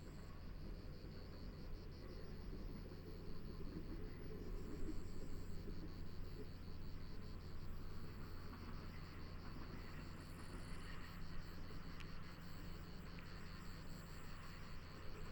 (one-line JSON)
{"title": "Črnotiče, Črni Kal, Slovenia - Train station Črnotiče", "date": "2020-07-10 10:31:00", "description": "Electric locomotive and and electric passenger train. Recorded with Lom Usi Pro.", "latitude": "45.55", "longitude": "13.89", "altitude": "389", "timezone": "Europe/Ljubljana"}